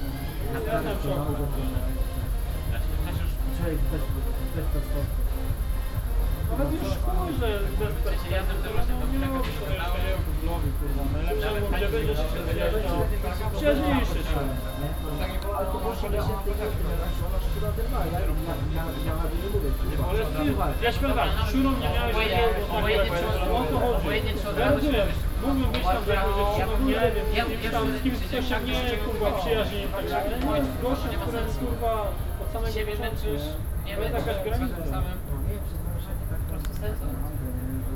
Poznan, Jana III Sobieskiego housing estate - student party
standing next to a tall apartment building. a party taking place in one of the apartments. young people talking on the balcony. (sony d50)